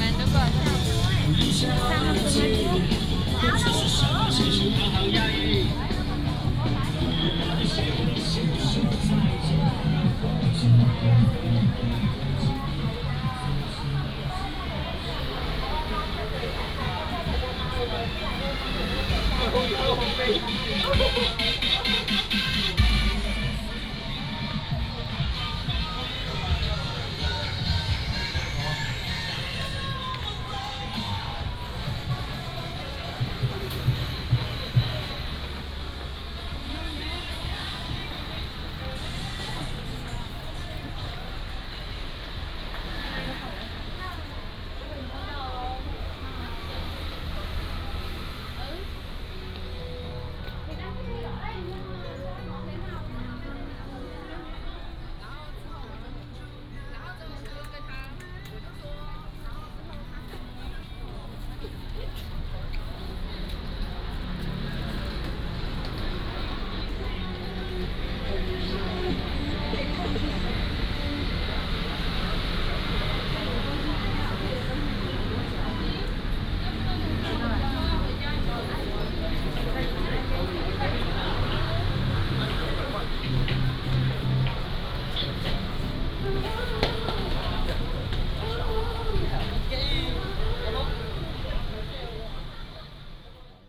Walking in the shopping street, Various store sounds, Traffic sound